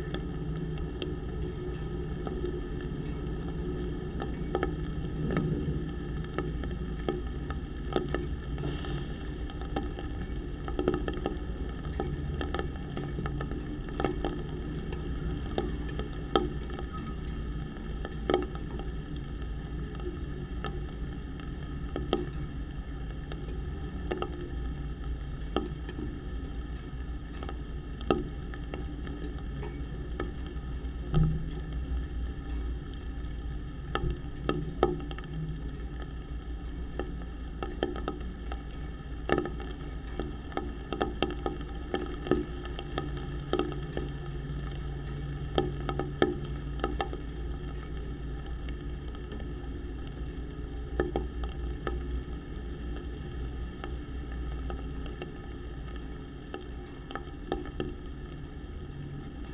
Utena, Lithuania, pipe and rain
small omni microphones inside some pipe (light pole laying on the ground)...it's raining...